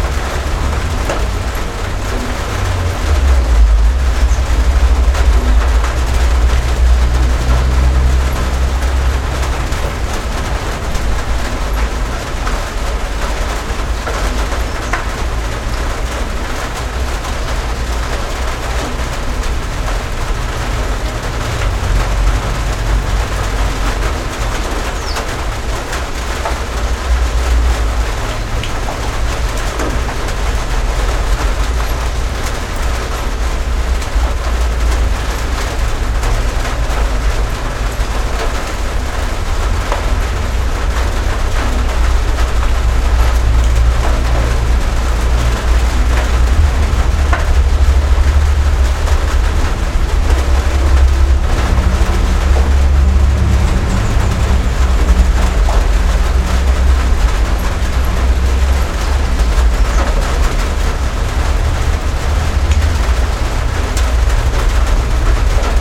Southern Paarl, Paarl, South Africa - Rain on a tin roof
Stereo microphone under an tin roof over the entrance to the dwelling.
Connected directly to a Sony ICD-UX512F recorder. Un-edited.
26 July 2016